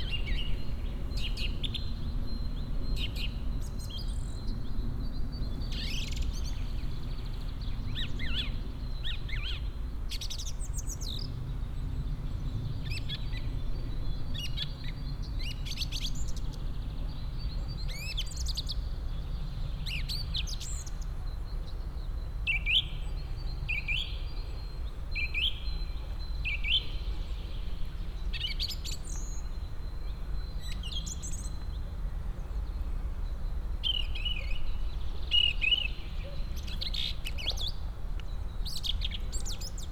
Morasko nature reserve, path uphill - in the pine trees
a very talkative bird sitting on one of the pine trees displaying its wide range of calls.